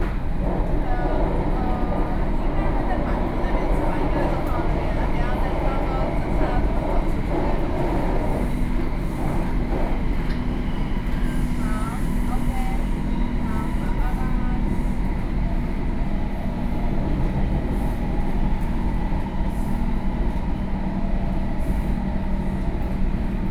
112台灣台北市北投區東華里 - MRT train
Taipei City, Taiwan, 7 December 2012, 15:49